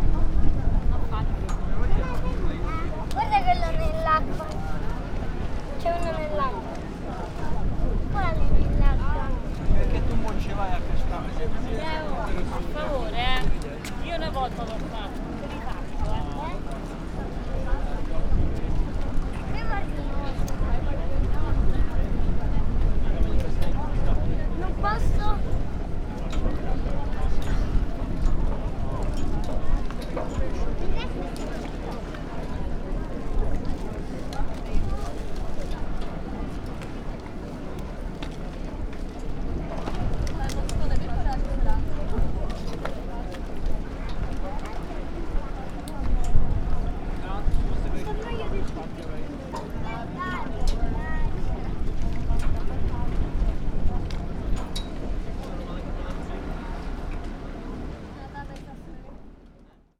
ferry arrives at the marina in Vernazza. The platform is lowered and passengers are leaving the boat.
Vernazza La Spezia, Italy